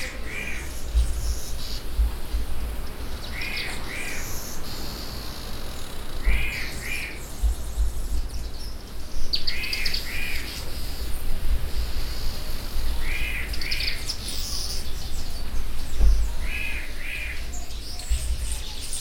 Tusimpe dorm, Binga, Zimbabwe - birds in the mnemu trees...
...morning sounds in front of my window… weaver birds in the mnemu trees, sounds from my brothers at the kitchen getting in to swing, school kids still passing by on the path along the fence ...